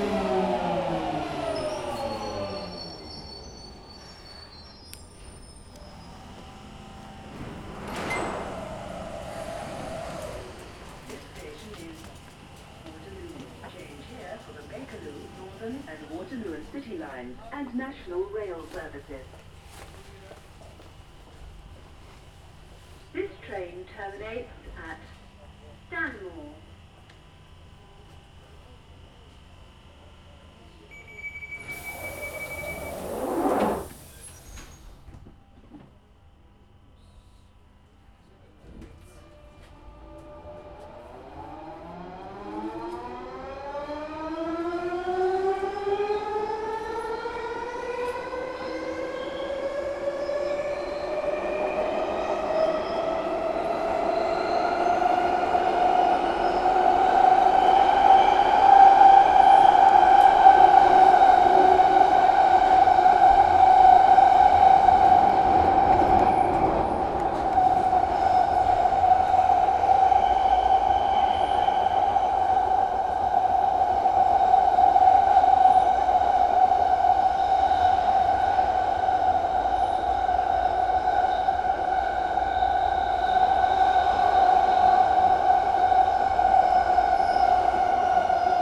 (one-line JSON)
{"title": "Trip - London Waterloo to Wigmore Hall - Trip from London Waterloo to Wigmore Hall", "date": "2016-03-15 10:09:00", "description": "Start: Jubilee Line Northbound platform at London Waterloo underground station.\n00:01:00 One train arrives and leaves\n00:02:30 Another train comes. I get on.\n00:04:27 Arrives at Westminster\n00:05:20 Leaves Westminster\n00:06:40 Arrives at Green Park\n00:07:15 Leaves Green Park\n00:08:30 Arrives Bond Street. I get off.\n00:09:00 Another train arrives at the Southbound platform\n00:09:30 Escalators (1)\n00:10:15 Escalators (2)\n00:11:00 Ticket barriers\n00:11:22 Stairs to Oxford Street\n00:11:45 Walk onto Oxford Street\n00:12:30 Wait at crossing\n00:13:05 Crossing beeps. I don't cross.\n00:14:00 I cross\n00:14:10 Walk down the side of Debenhams\n00:15:00 Walking down Marylebone Lane, Henrietta Place, Welbeck Street\n00:16:00 Crossing Wigmore Street to Wigmore Hall", "latitude": "51.52", "longitude": "-0.15", "altitude": "30", "timezone": "Europe/London"}